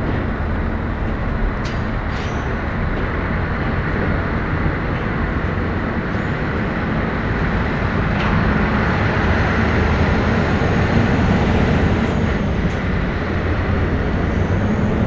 Ume. Holmsund Ferry Terminal. Ferry docking
Holmsund - Vaasa ferry docking and unloading.